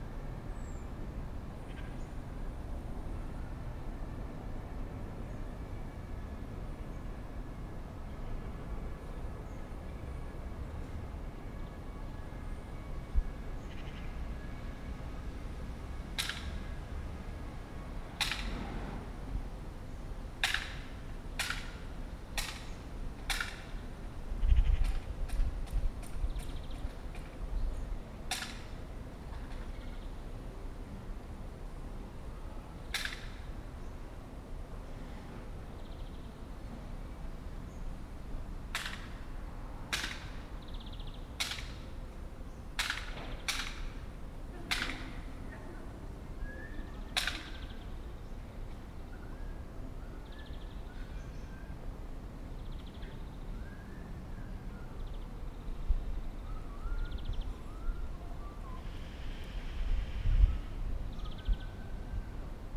Köln, Deutschland - Garten der Religionen / Garden Of Religions
Aus dem Garten sind die Geräusche der umgebenden Straßen zu hören, ein Krankenwagen, Autos. In einem Gebäude am Rand des Gartens arbeiten Handwerker.
From the garden the sounds of the surrounding streets are heard, an ambulance, cars. In a building at the edge of the garden working craftsmen.
21 July, 12:30